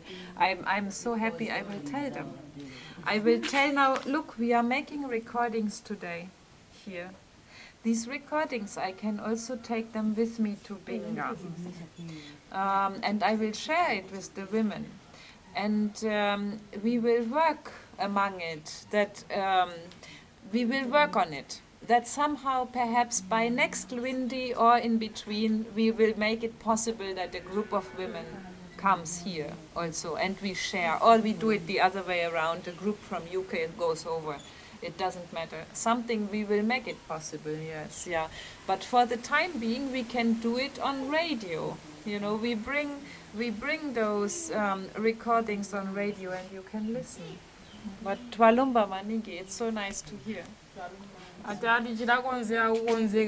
Lwiindi Ground, Sinazongwe, Zambia - a message across the waters...
...we are in the Lwiindi grounds meeting two local women groups, the Tusumpuke Saving group and the Nsenka Women’s Club. Mary Mwakoi introduced us to the women; Monica and Patience from Zongwe FM are making recordings for our upcoming live shows. The women present their projects and products; Claudia has brought greetings from the Zubo women across Lake Kariba, and a clash bag woven of Ilala Palm by the Binga women to introduce some of Zubo’s projects… here, one of the women from Nsenka responds with the wish that Zubo’s women should come for a visit across the Zambezi and teach them how to weave such bags…